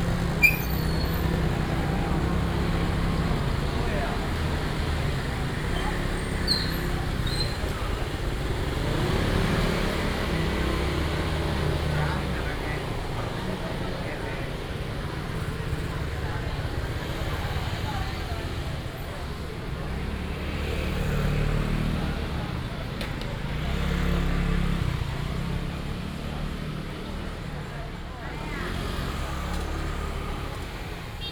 Ben St., Dongshi Dist., Taichung City - in the traditional market
Walking in the traditional market, vendors peddling, traffic sound, Brake sound, Binaural recordings, Sony PCM D100+ Soundman OKM II
19 September 2017, 07:30